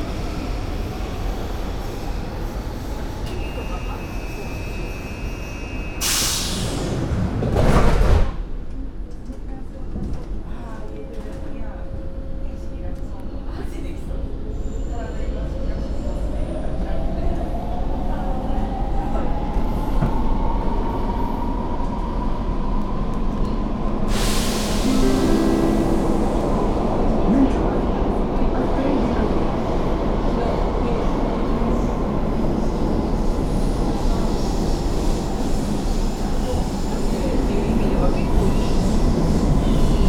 Brussels, Métro Louise and further.